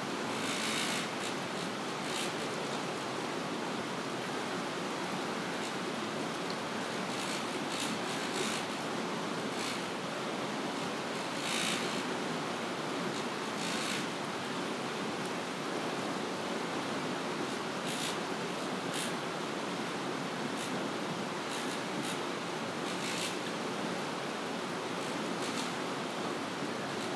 Hastedter Osterdeich, Bremen, Germany - Hydroelectric power plant
Using binaural microphones, capturing a buzzing sound and the sound of flowing water.
Deutschland, 13 May 2020